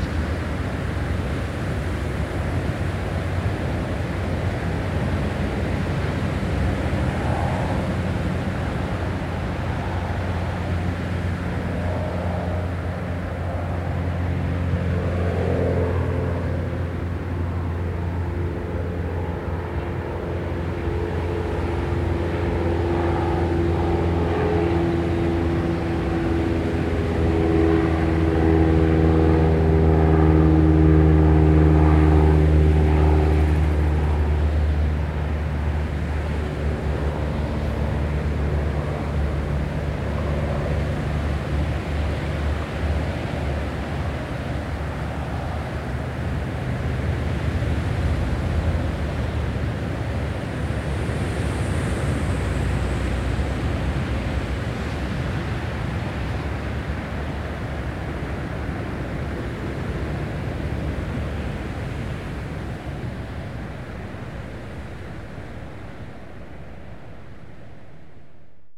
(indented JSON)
{
  "title": "Toulouse, France - motorway",
  "date": "2021-11-01 17:00:00",
  "description": "motorway, engine, aircraft, traffic",
  "latitude": "43.62",
  "longitude": "1.48",
  "altitude": "136",
  "timezone": "Europe/Paris"
}